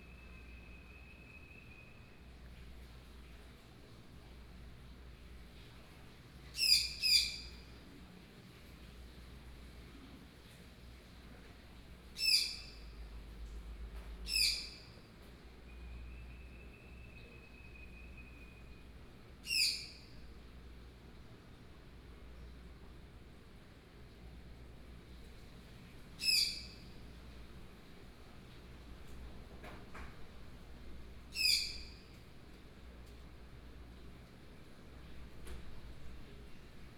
Ln., Sec., Zhongyang N. Rd., Beitou Dist - Unknown birdsong
Unknown birdsong, Binaural recordings, Sony PCM D50 + Soundman OKM II
Taipei City, Taiwan, 17 October, ~17:00